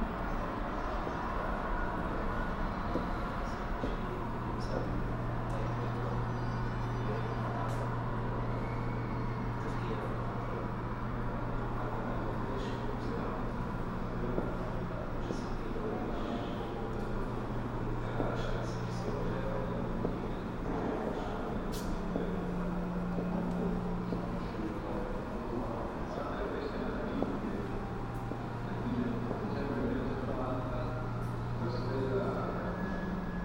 museum for contemporary art, ljubljana - inside
ground flour walk